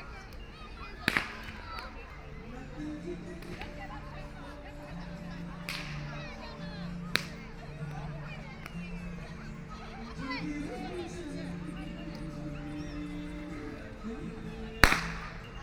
Hongkou District, Shanghai - Playing rope swing
on the grass, A group of people is the voice of a rope thrown to fight, There are people singing nearby, Binaural recording, Zoom H6+ Soundman OKM II